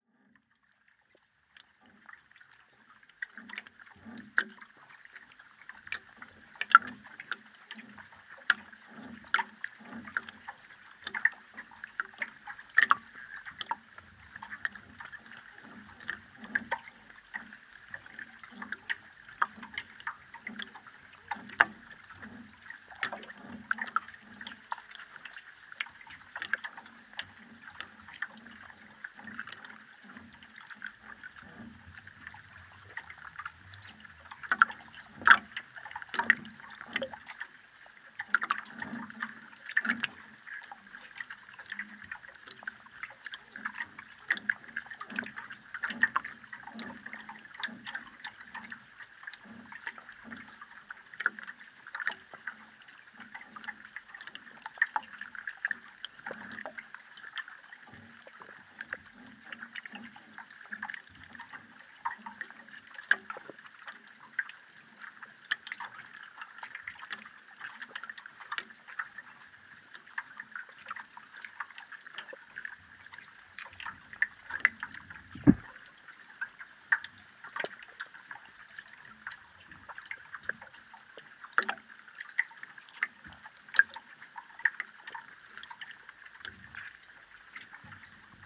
Senheida, Latvia, Senheidas lake underwater
Hidrophone in Sengheida lake...there is a boat swaying at the bridge...
Daugavpils novads, Latgale, Latvija, July 2020